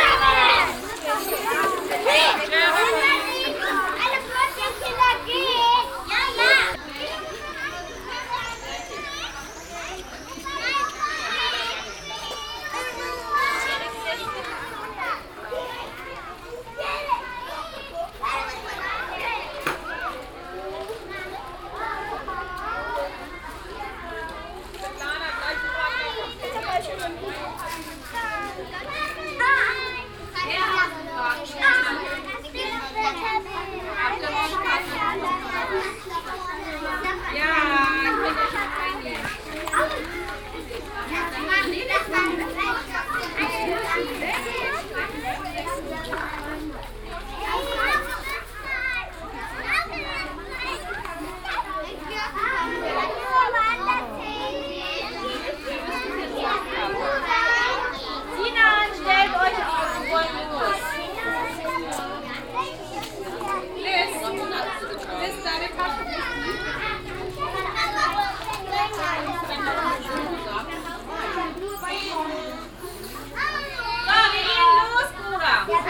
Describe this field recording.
In the morning shortly before noon at a kindergarden. Kids gathering in a row while others play in the sand outside. A group leaving for lunch waving good bye. soundmap d - social ambiences and topographic field recordings